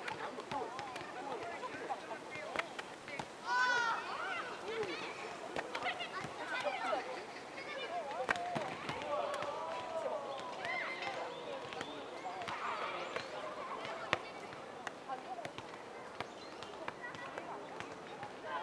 대한민국 서울특별시 서초동 서울교육대학교 - SNUE playground, kids playing soccer
SNUE playground, kids playing soccer, nice reflection
서울교육대학교, 아이들 축구